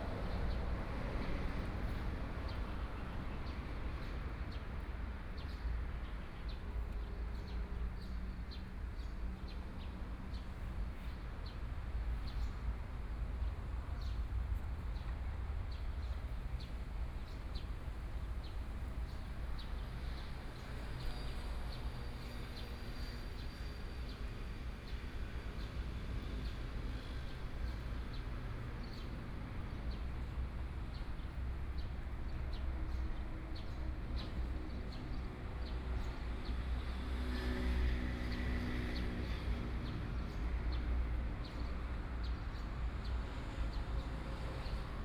Sec., Zhongshan Rd., 宜蘭市南津里 - under the railroad tracks
under the railroad tracks, Traffic Sound, Birds, Trains traveling through
Sony PCM D50+ Soundman OKM II
Yilan City, Yilan County, Taiwan, 26 July 2014, ~11:00